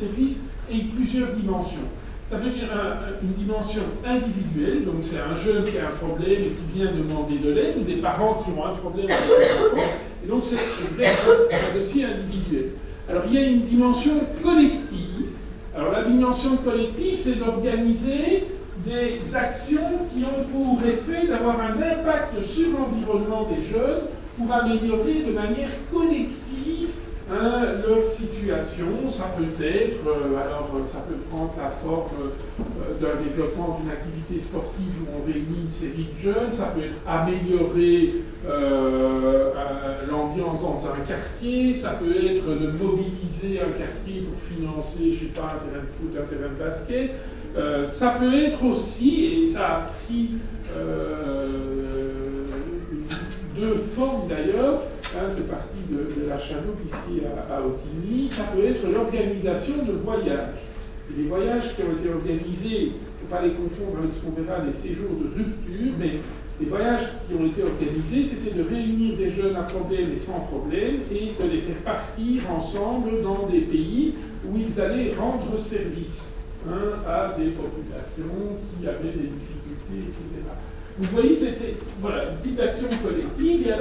Centre, Ottignies-Louvain-la-Neuve, Belgique - A course of social matters
A course of social matters, in the big Agora auditoire.
Ottignies-Louvain-la-Neuve, Belgium, 11 March 2016, 15:20